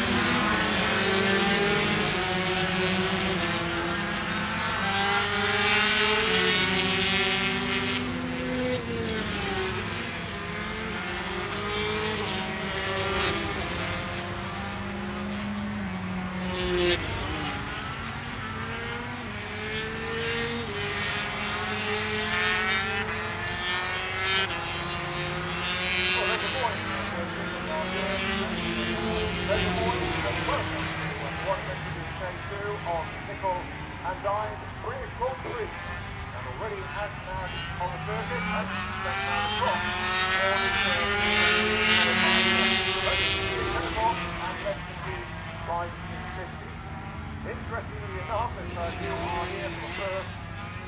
Derby, UK - british motorcycle grand prix 2007 ... 125 practice ...
british motorcycle grand prix 2007 ... 125 practice ... one point stereo mic to minidisk ...
East Midlands, England, United Kingdom, 23 June 2007, 09:00